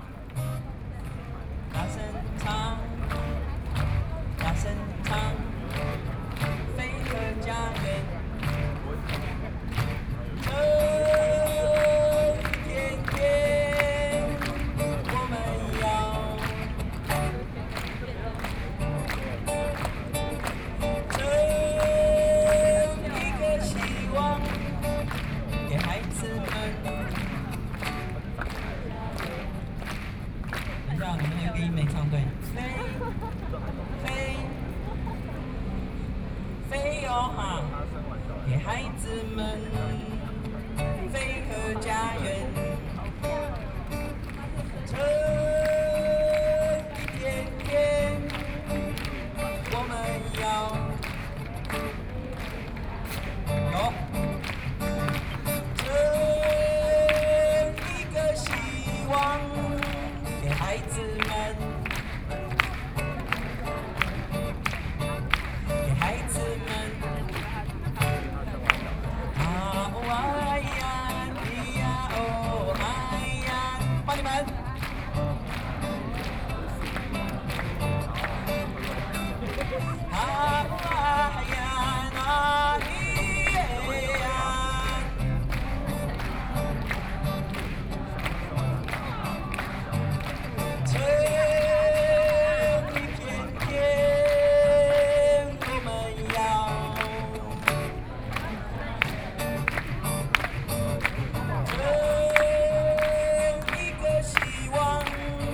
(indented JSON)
{
  "title": "中正區 (Zhongzheng)Taipei City, Taiwan - Antinuclear",
  "date": "2013-09-06 20:03:00",
  "description": "Taiwanese Aboriginal singers in music to oppose nuclear power plant, Sing along with the scene of the public, Sony PCM D50 + Soundman OKM II",
  "latitude": "25.04",
  "longitude": "121.52",
  "timezone": "Asia/Taipei"
}